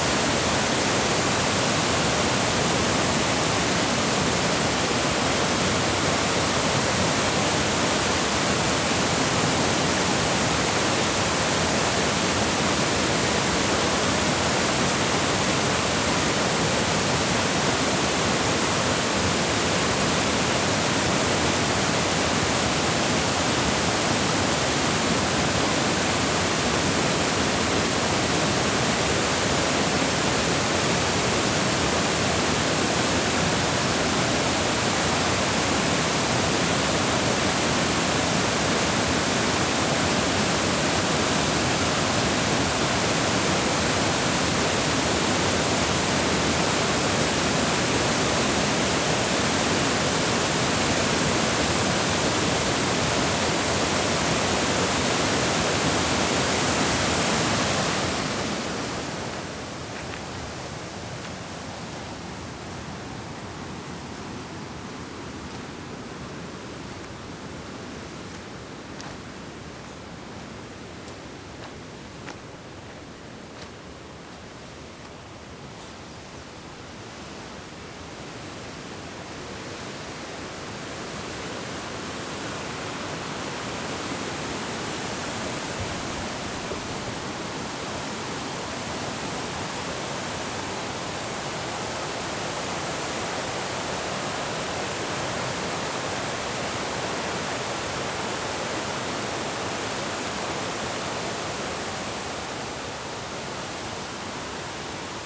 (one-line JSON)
{"title": "Natural Dam - Walking around Natural Dam", "date": "2022-04-12 11:48:00", "description": "Walking around the Natural Dam Falls", "latitude": "35.65", "longitude": "-94.40", "altitude": "204", "timezone": "America/Chicago"}